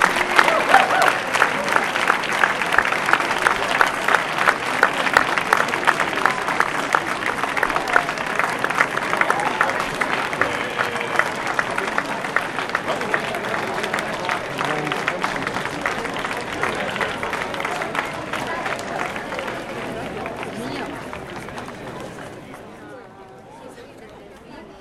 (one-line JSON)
{"title": "riola-la banda al bar-take the cage train", "latitude": "44.23", "longitude": "11.06", "altitude": "255", "timezone": "GMT+1"}